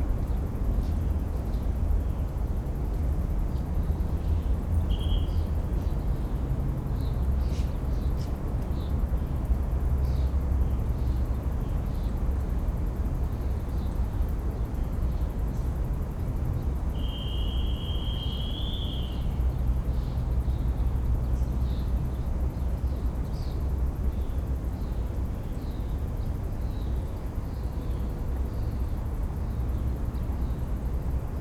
Botanical Gardens, Madrid, closing time
World Listening Day, WLD